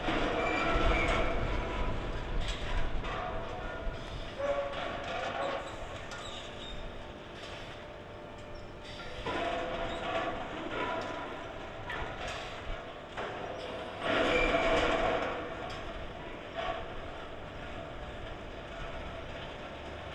Liquigas station, Bengħajsa, Birżebbuġa, Malta - distant sounds of bottling plant
Liquigas bottling plant at work, from a distance. Difficult to record because of strong wind at Malta's south coast
(SD702, AT BP4025)